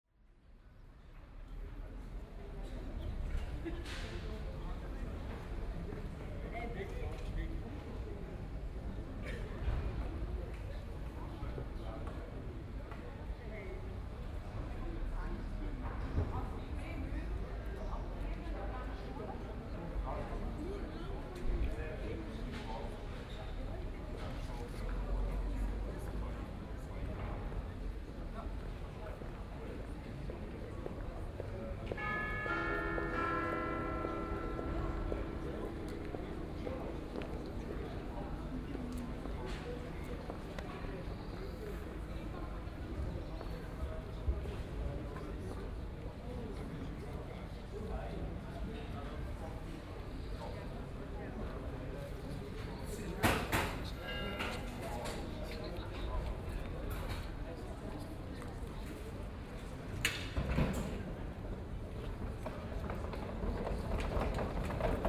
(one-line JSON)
{"title": "Aarau, Kirchplatz, Transport, Schweiz - Kirchplatz 2", "date": "2016-06-30 14:13:00", "description": "Preperations on the Kirchplatz for the Maienumzug, something rolling over the cobble stones. The bell tolls a quarter past two", "latitude": "47.39", "longitude": "8.04", "altitude": "381", "timezone": "Europe/Zurich"}